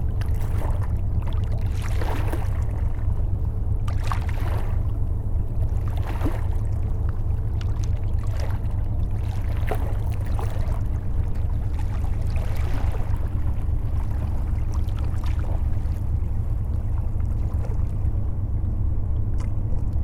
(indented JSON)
{
  "title": "Normandie, France - The dredger boat",
  "date": "2016-07-21 11:00:00",
  "description": "A boat is dredging the Seine river, it makes a permanent deaf sound.",
  "latitude": "49.43",
  "longitude": "0.33",
  "altitude": "6",
  "timezone": "Europe/Paris"
}